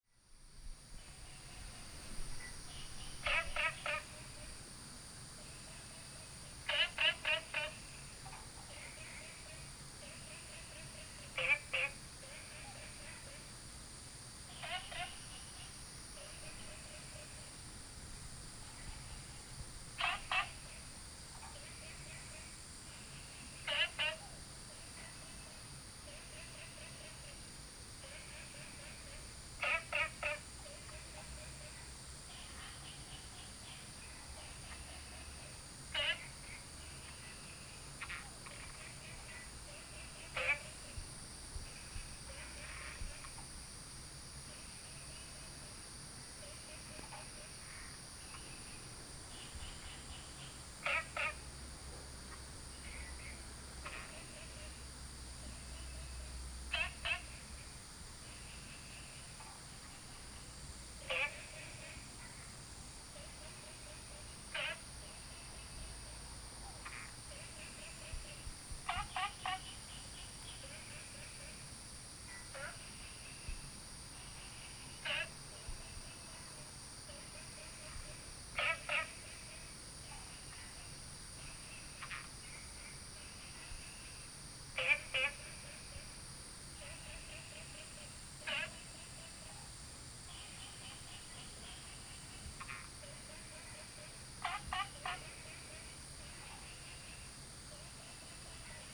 Taomi Ln., Puli Township - Early morning
Early morning, Frogs chirping, Bird calls
Puli Township, 桃米巷11-3號, 12 August 2015